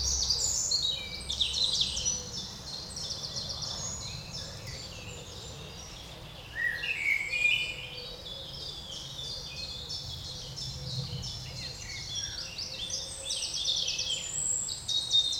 Mont-Saint-Guibert, Belgique - A quiet sunday morning on the pond
Morning recording of a pond without name near the Beclines street. It's a peaceful place, because it's almost abandoned. Some years ago, a Corbais real estate developer had the idea to build a lake city. It was rejected and since, it's an abandoned place. It's quite wild, there's trees fallen in the pond. Listen to all the friends the birds, I listed (at least), with french name and english name :
Rouge-gorge - Common robin
Merle noir - Common blackbird
Poule d'eau - Common moorhen
Pouillot véloce - Common chiffchaff
Tourterelle turque - Eurasian Collared Dove
Pigeon ramier - Common Wood Pigeon
Choucas des tours - Western Jackdaw
Troglodyte mignon - Eurasian Wren
Mésange bleue - Eurasian Blue Tit
Mésange charbonnière - Great Tit
Corneille noire - Carrion Crow
Pie bavarde - Eurasian Magpie
(shortly 45:23) Canard colvert - Mallard
Très loin - vache, coq. Plus près : chien, homo sapiens, trains, avions pénibles.
Far - cow, rooster. Closer : dog, homo sapiens, trains, painful planes.